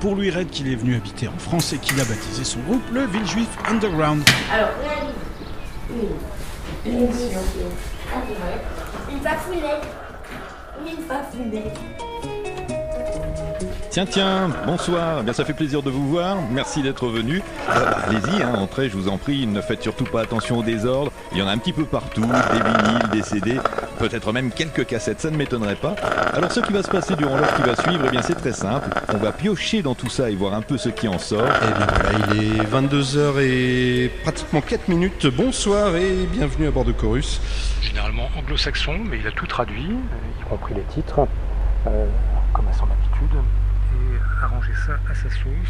Radio Primitive - what the radio sounds like
Radio Primitive est une structure socio-culturelle à vocation radiophonique né en 1981 (auparavant, elle émettait illégalement sous le nom de "Radio Manivesle"). C’est une radio qui se fait l’écho quotidiennement de la vie sociale et culturelle locale (et régionale) dont elle relaie les informations.
Reims, France, 4 July 2017